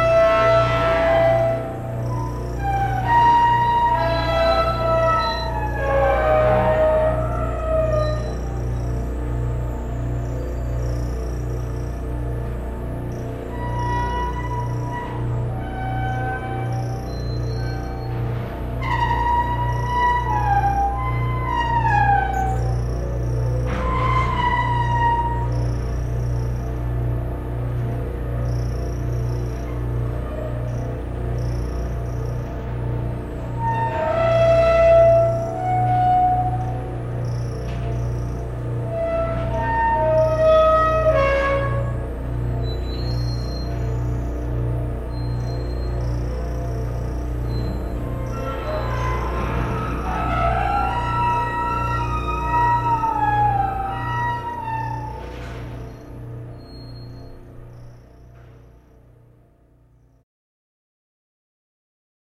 Hinookaissaikyōdanichō, Yamashina Ward, Kyoto, Japon - Kyoto Keage Hydroelectric Power Station
Kyoto (Japon)
Keage Hydroelectric Power Station